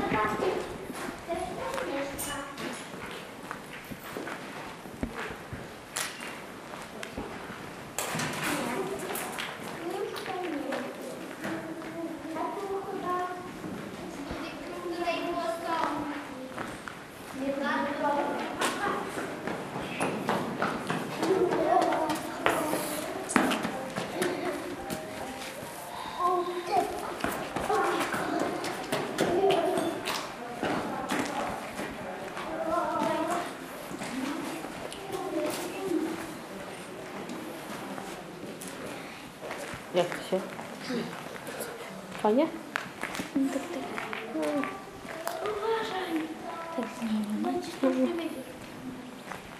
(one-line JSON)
{"title": "Wyspa Sobieszewska, Gdańsk, Poland - Former PGR", "date": "2015-05-10 12:12:00", "description": "Pusta obora dawnego PGR. Rajd dźwiękowy po Wyspie Sobieszewsksiej. Warsztaty Ucho w Wodzie.", "latitude": "54.33", "longitude": "18.84", "timezone": "Europe/Warsaw"}